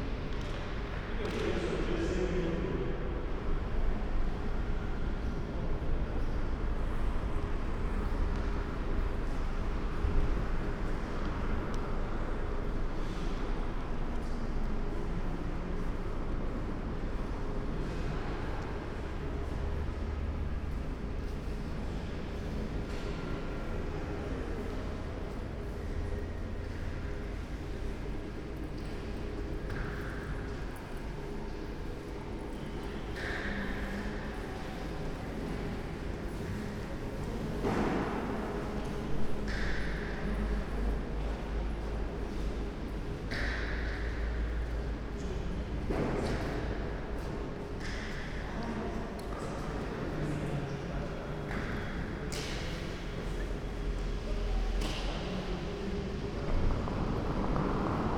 Hbf, Halle (Saale), Deutschland - main station, small hall ambience
strolling around at Halle, main station
(Sony PCM D50, Primo EM172)
Halle (Saale), Germany, 27 October 2016, 20:15